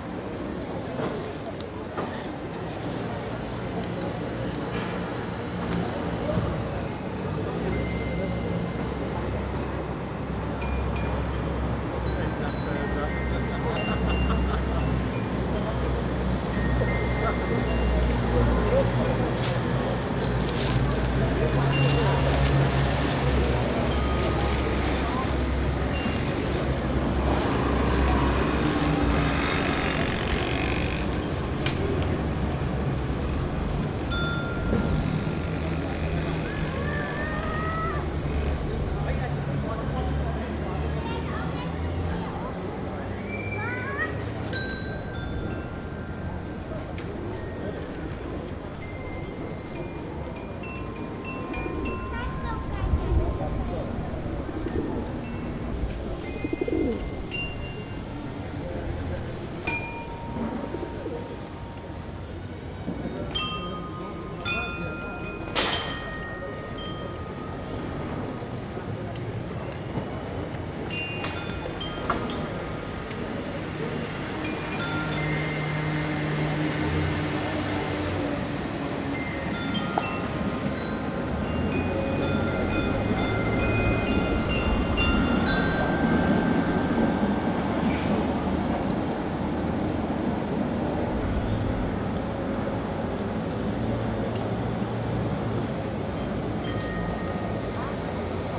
Kortenbos, Den Haag, Nederland - Kids on the Dance Chimes
Kids playing on the Dance Chimes in front the ice-cream parlor Florencia in Den Haag. And of course a lot of traffic, people and some pigeons.